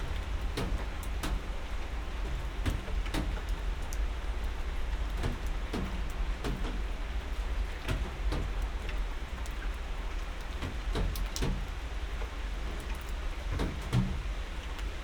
under porch of the restaurant
the city, the country & me: july 17, 2012
99 facets of rain
Berlin, Germany